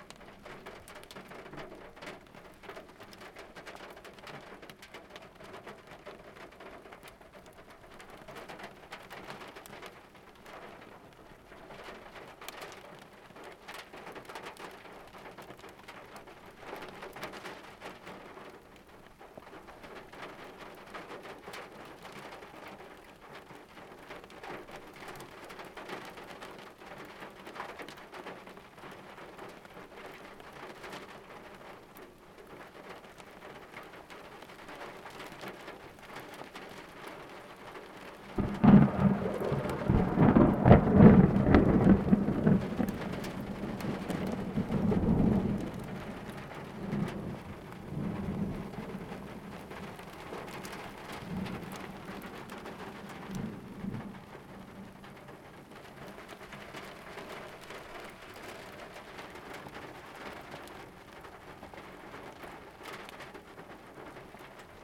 North Coogee WA, Australia - Electrical Storm Just Off The Coast
There was a series of electrical storms just off the coast tonight, looming over garden island, and south to Kwinana. I jumped in the car and headed down to the water to catch some better views of the storm, and I waited for it to get close enough to hear. The rain on the roof is the very edge of the storm and the ocean was just flashing from about 5 strikes a minute, 180 degrees around me. Recorded from inside my car, with the window down. The wind noise is the sound of the wind whipping through the door frame. I was getting pretty wet with the window down, but it provided the best sound. Thanks to Zak for the company while recording this, Recorded on a Zoom H2N, Zoom windshield, with ATH-M40x headphones.
12 November 2017